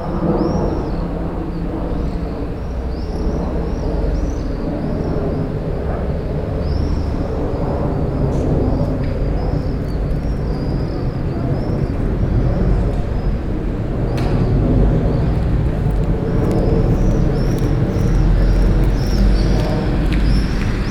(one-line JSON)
{"date": "2011-07-05 10:55:00", "description": "Montluel, Impasse du Moulin, the bells from Notre-Dame-des-Marais", "latitude": "45.85", "longitude": "5.06", "altitude": "206", "timezone": "Europe/Paris"}